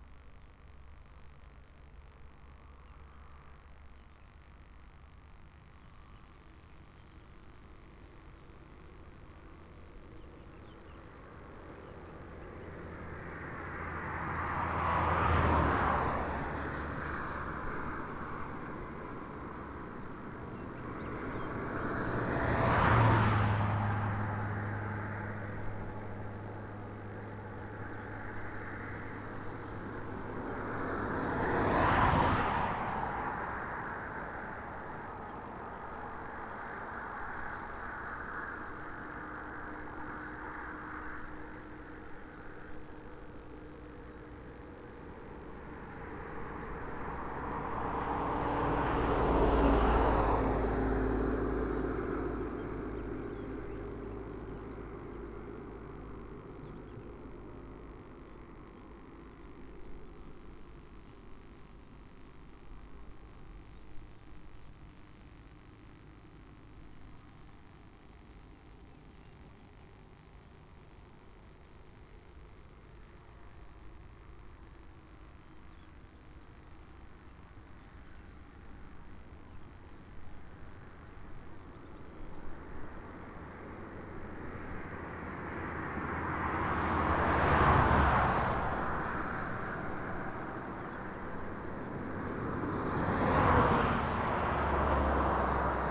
Lithuania, Siaudiniai, high voltage wires and traffic
crackling high voltage wires and traffic on the road